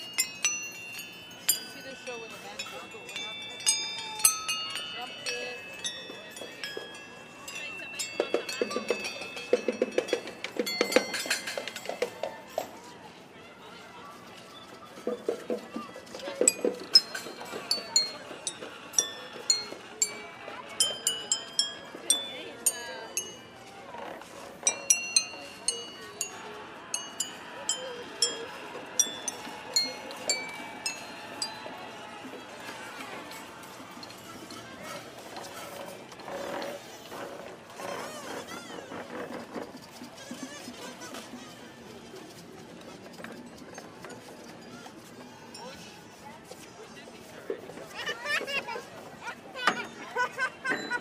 Montreal: Place des Arts - Place des Arts
equipment used: Nagra Ares MII
Childrens entertainers, child musicians and drumming workshop at the Jazz Festival
Montreal, QC, Canada, 5 July, 15:23